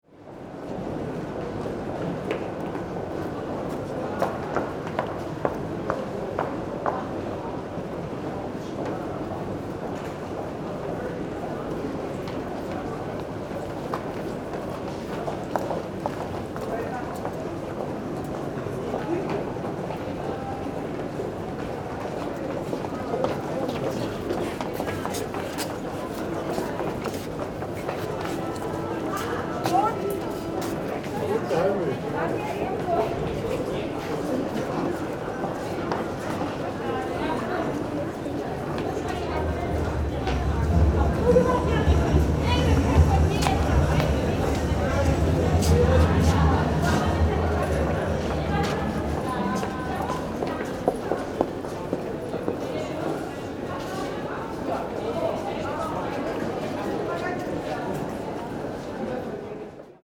Alexanderplatz - subway, steps
Berlin, Alexanderplatz, sunday evening, subway U5/U2, stairs and steps
Berlin, Germany, December 2009